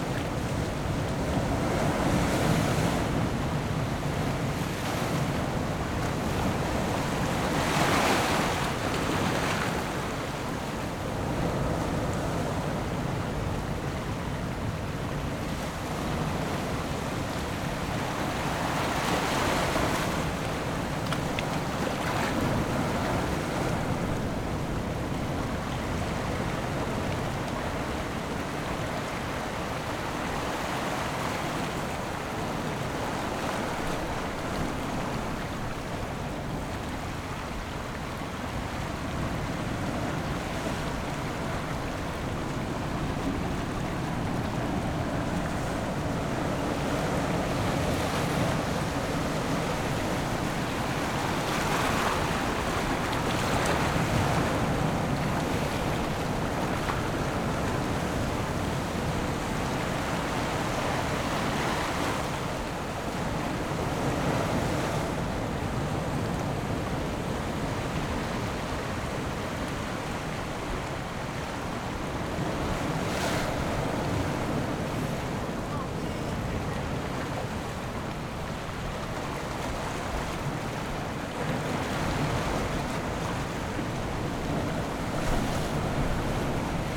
雙獅岩, Jizanmilek - On the coast
On the coast, sound of the waves
Zoom H6 +Rode NT4